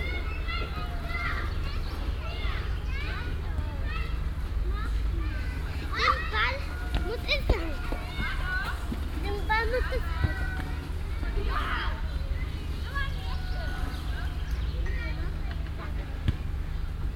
cologne, oberlaender wall, kita
soundmap nrw/ sound in public spaces - in & outdoor nearfield recordings